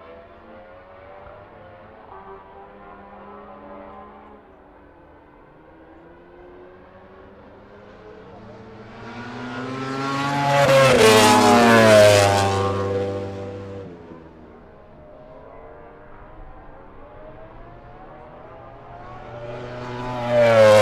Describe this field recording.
British Motorcycle Grand Prix 2004 qualifying ... part one ... one point stereo mic to minidisk ...